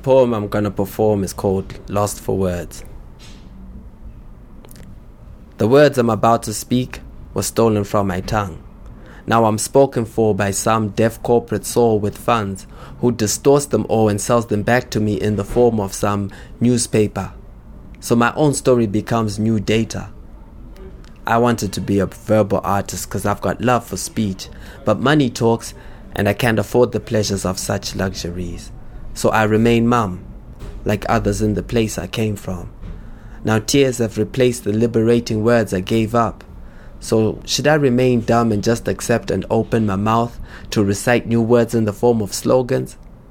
The Book Cafe, Harare, Zimbabwe - Upmost, “Lost for Words…”
Ngonidzashe Tapiwa aka Upmost, “Lost for Words…”
some were broadcast in Petronella’s “Soul Tuesday” Joy FM Lusaka on 5 Dec 2012: